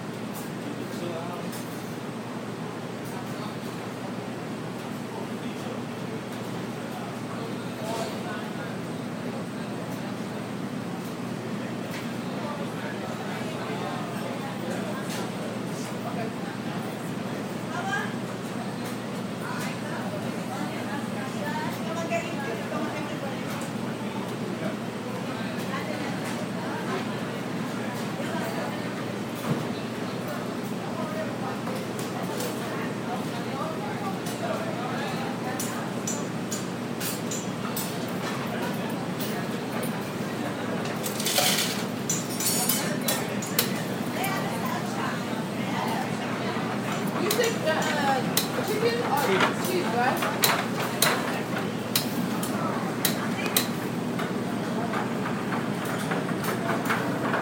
Muhlenberg College Generals Quarters, West Chew Street, Allentown, PA, USA - GQ Grill counter
recording taken at the counter by the grill in the Generals Quarters